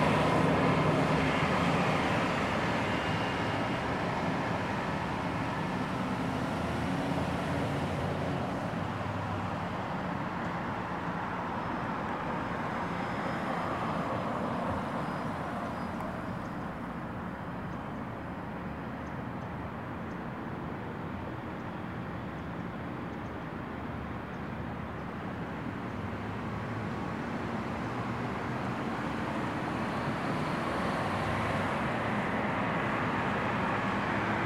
El Colegio Rd, Goleta, CA, USA - Traffic, Birds, Morning Sounds
Just outside the Westwinds apartment is a big cross street as well as a bus stop. There's a lot of traffic and bus noises, as well as some birds cawing and chirping. A plane also flies by at some point.
California, USA, 24 October 2019, 08:33